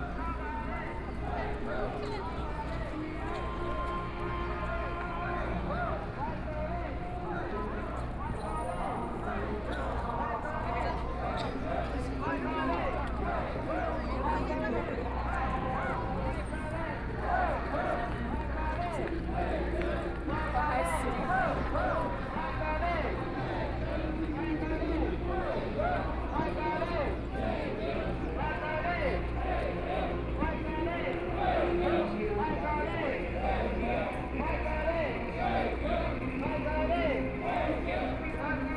Zhongshan S. Rd., Taipei City - Cries of protest
Cries of protest, Binaural recordings, Sony PCM D50 + Soundman OKM II